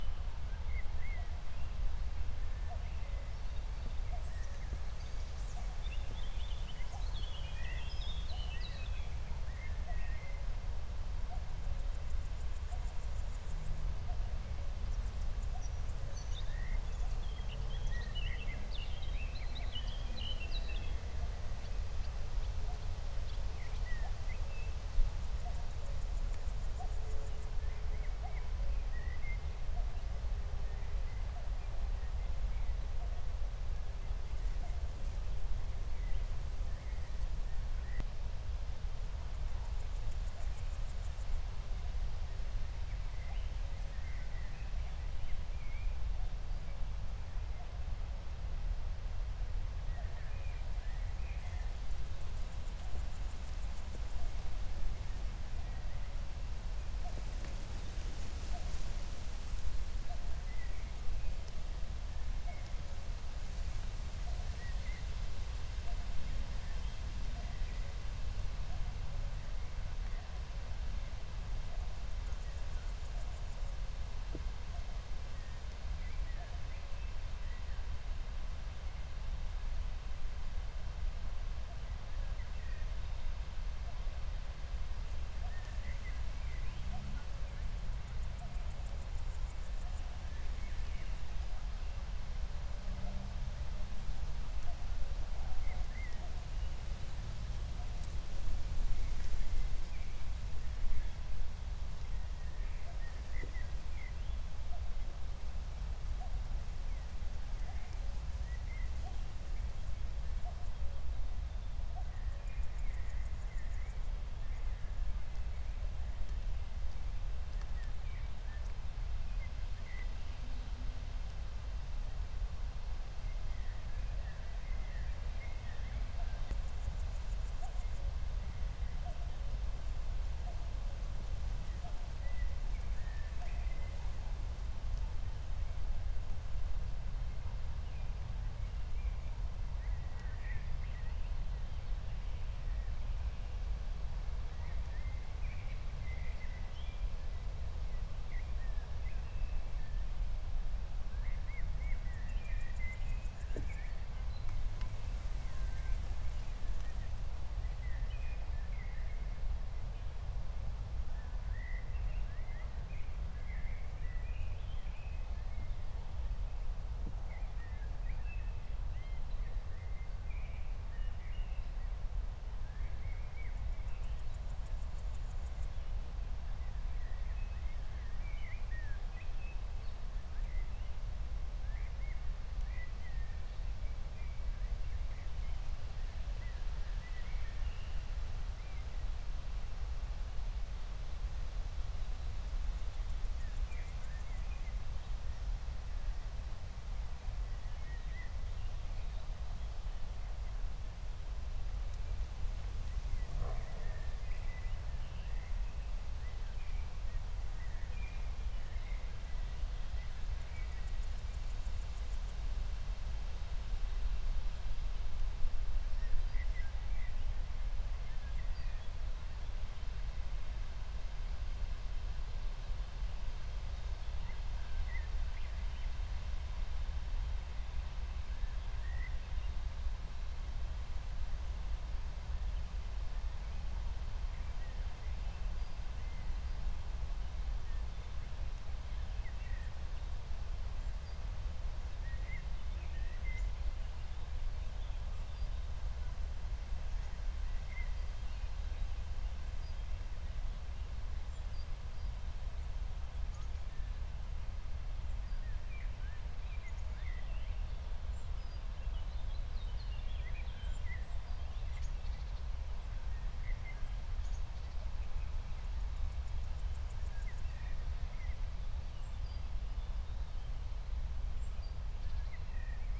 Berlin, Buch, Mittelbruch / Torfstich - wetland, nature reserve
16:00 Berlin, Buch, Mittelbruch / Torfstich 1